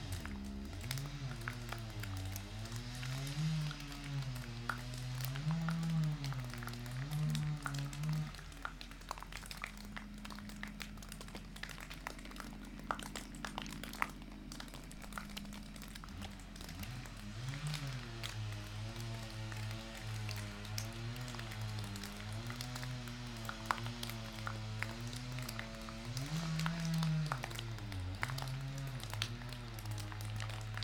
Adomynė, Lithuania, abandoned school
Abandoned school building. Waterdrops from the roof and chainsaw on the other side of the street
Panevėžio apskritis, Lietuva, 26 February 2022